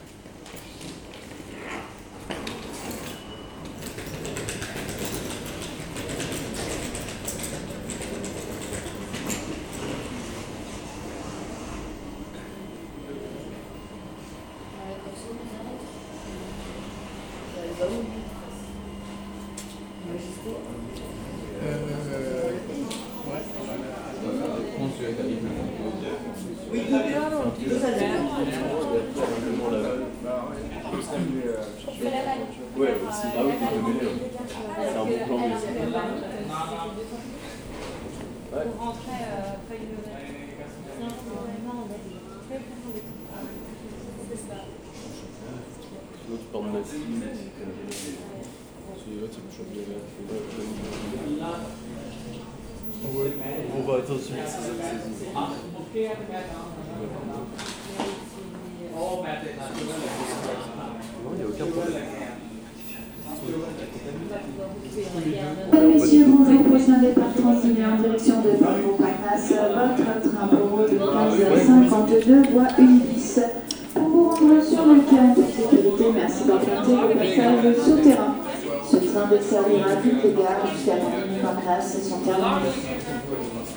Rambouillet, France - Rambouillet station
The main waiting room of the Rambouillet station. People talking while they wait their train, and some annoucements about a platform change.
1 January 2019, 15:50